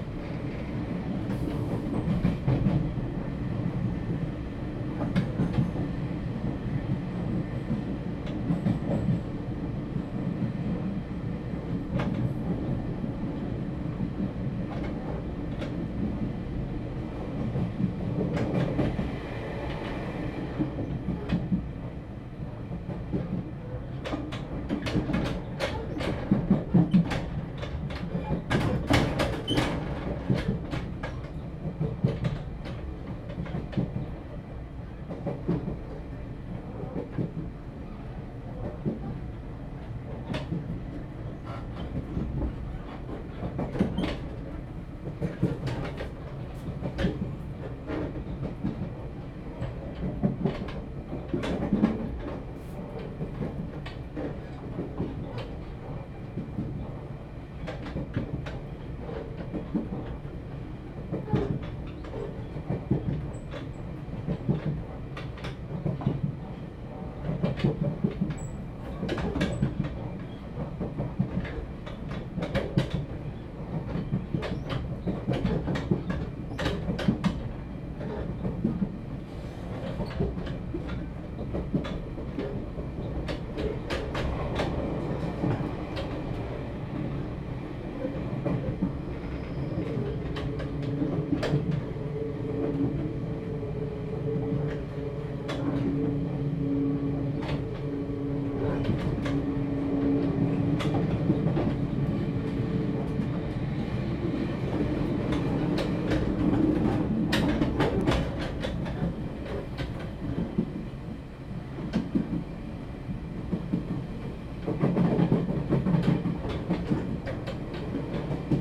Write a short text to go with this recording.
In the train compartment joint passage, Traffic sound, Binaural recordings, Sony PCM D100+ Soundman OKM II